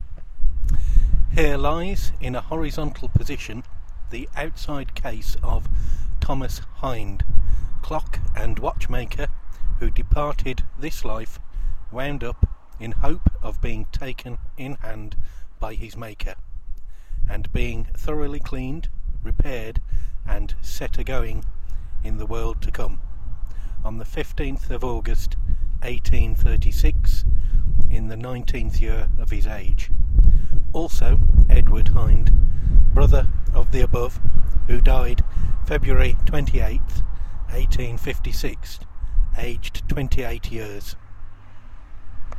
Headstone in Bolsover Church Graveyard
Inscription on headstone by the side of Bolsover Parish Church
Derbyshire, UK, 14 September